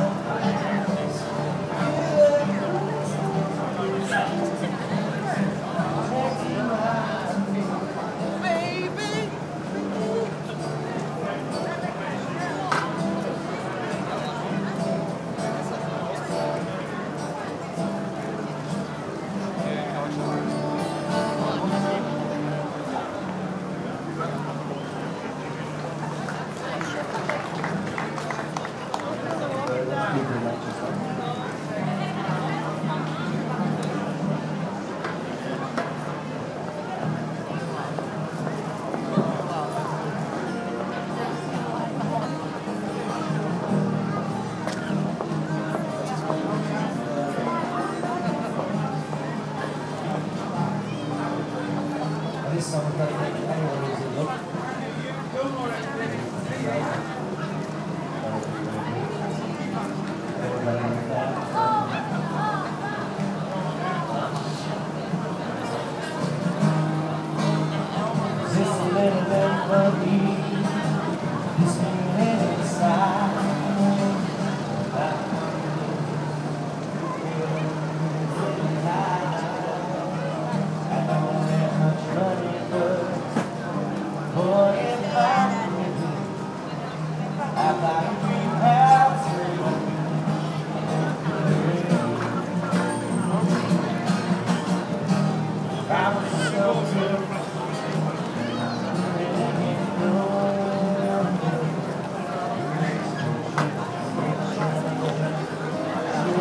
Singer, guitar, people, markets, food
Greater Manchester, UK, 9 September 2010, 17:18